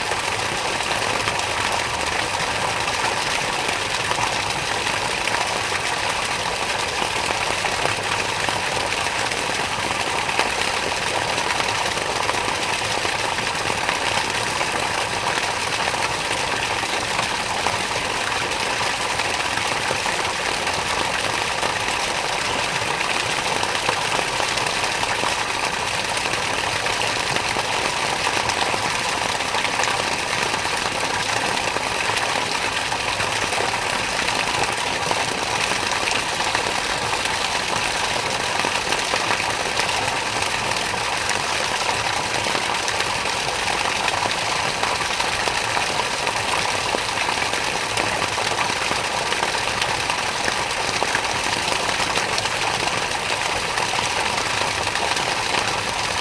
{"title": "Śródmieście Północne, Warszawa - Fontanna Palac Kultury i Nauki (a)", "date": "2013-08-20 11:34:00", "description": "Fontanna Palac Kultury i Nauki (a), Warszawa", "latitude": "52.23", "longitude": "21.01", "altitude": "125", "timezone": "Europe/Warsaw"}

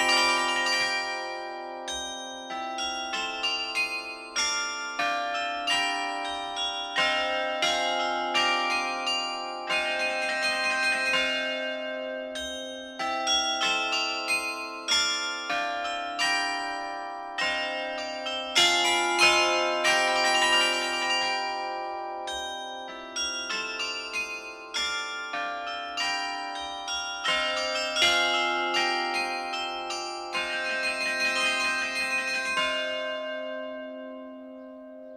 {"title": "Pl. du Marché aux Chevaux, Bourbourg, France - Bourbourg - Carillon de l'église", "date": "2020-06-16 10:00:00", "description": "Bourbourg (Département du Nord)\ncarillon de l'église St-Jean-Baptiste\nMaître carillonneur : Monsieur Jacques Martel", "latitude": "50.95", "longitude": "2.20", "altitude": "7", "timezone": "Europe/Paris"}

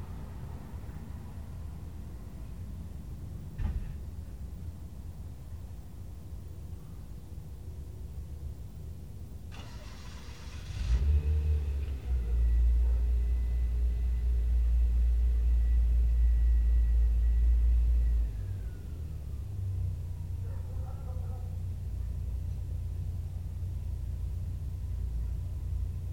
{"title": "California Ave SW, Seattle - 1520 Calif. Ave SW #1", "date": "1979-01-27 19:57:00", "description": "A quiet evening in West Seattle, overlooking Elliott Bay toward downtown. The sounds of human traffic are reflected off the concrete wall surrounding the parking lot beneath my deck, creating moiré patterns in sound. A multitude of sources overlap and blend in surprising ways.\nThis was my first phonographic \"field recording, \" taken off the deck of my West Seattle apartment with my then-new Nakamichi 550 portable cassette recorder. Twenty years later it became the first in a series of Anode Urban Soundscapes, when I traded in the Nak for a Sony MZ-R30 digital MiniDisc recorder and returned to being out standing in the field. The idea came directly from Luc Ferrari's \"Presque Rien\" (1970).\nMajor elements:\n* Car, truck and bus traffic\n* Prop and jet aircraft from Sea-Tac and Boeing airfields\n* Train horns from Harbor Island (1 mile east)\n* Ferry horns from the Vashon-Fauntleroy ferry (4 miles south)", "latitude": "47.59", "longitude": "-122.39", "altitude": "82", "timezone": "America/Los_Angeles"}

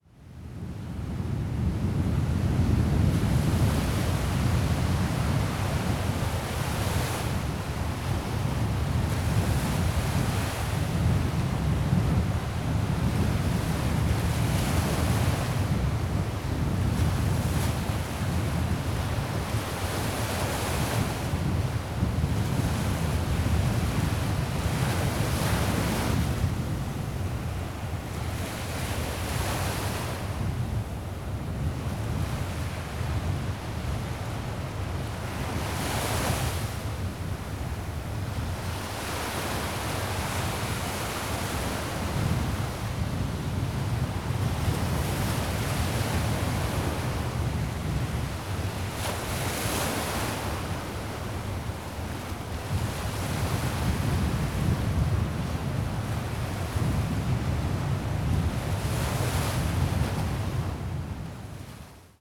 {"title": "Sasino, on the beach - a minute on the beach", "date": "2013-06-29 12:05:00", "description": "a gasp of bear-strong wind and splashes of punchy waves", "latitude": "54.80", "longitude": "17.73", "altitude": "2", "timezone": "Europe/Warsaw"}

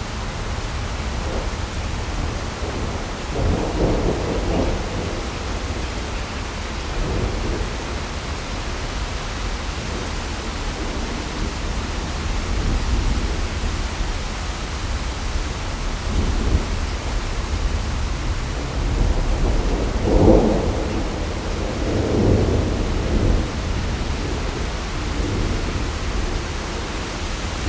{"title": "Patty Jewet, Colorado Springs, CO, USA - Front Range Thunderstorm", "date": "2016-06-10 17:13:00", "description": "Recorded with a pair of DPA4060s and a Marantz PMD661", "latitude": "38.86", "longitude": "-104.81", "altitude": "1865", "timezone": "America/Denver"}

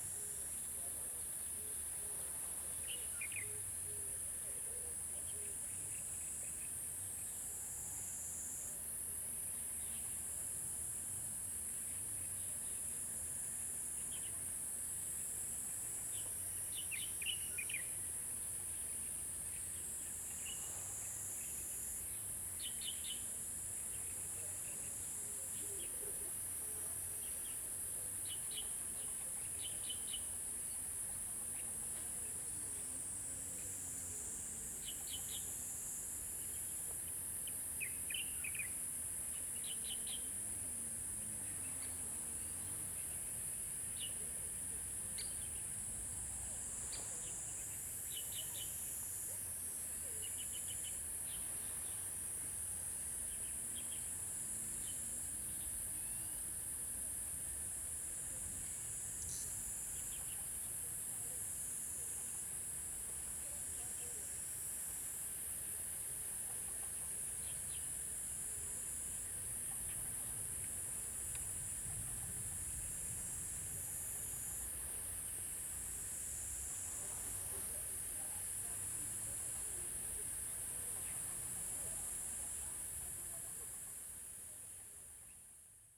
{"title": "埔里鎮桃米里, Taiwan - In the mountains", "date": "2016-07-12 17:17:00", "description": "In the mountains, Bird sounds\nZoom H2n MS+XY", "latitude": "23.94", "longitude": "120.91", "altitude": "661", "timezone": "Asia/Taipei"}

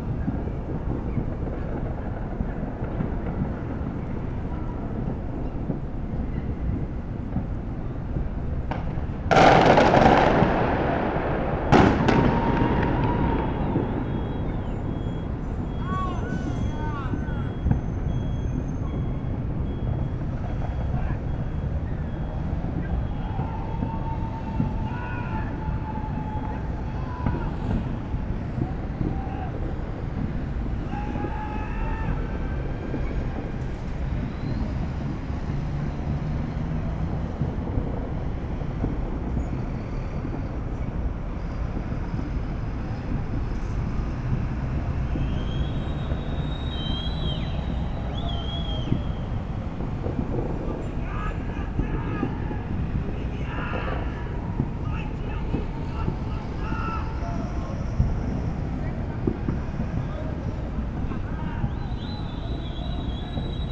{"title": "JK Building, Belo Horizonte - Happy New Year 2020 in Belo Horizonte (Brazil)", "date": "2020-01-01", "description": "Some fireworks and screaming for New Year 2020.\nRecording from the 14th floor in the center of Belo Horizonte(Brazil), JK building.\nRecorded by a AB Setup B&K4006\nSound Devices 833\nSound Ref: AB BR-191231T01\nGPS: -19.923656, -43.945767\nRecorded at midnight on 31st of December 2019", "latitude": "-19.92", "longitude": "-43.95", "altitude": "874", "timezone": "America/Sao_Paulo"}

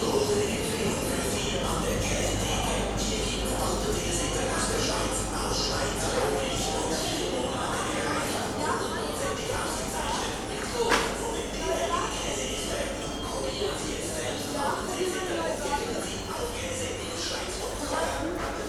{"title": "berlin, am treptower park: kaufhaus - the city, the country & me: department store", "date": "2011-06-04 16:00:00", "description": "short soundwalk through a department store: department store news, sound of refrigerators, visitors, advertising announcements, department store wheather report\nthe city, the country & me: june 4, 2011", "latitude": "52.49", "longitude": "13.46", "altitude": "46", "timezone": "Europe/Berlin"}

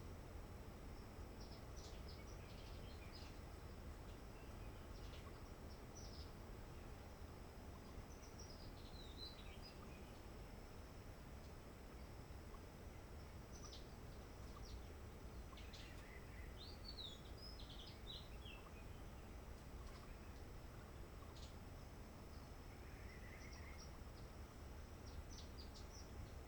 {"title": "Chemin de la Roche Merveilleuse, Réunion - 20200225 1433-1455", "date": "2020-02-25 14:33:00", "description": "Forêt de la Roche Merveilleuse: chant d'oiseaux du genre \"zostérops\" olivatus et borbonicus (oiseaux-lunette et oiseaux Q blanc)\nCe lieu est durement impacté par le tourisme par hélicoptère.", "latitude": "-21.12", "longitude": "55.48", "altitude": "1451", "timezone": "Indian/Reunion"}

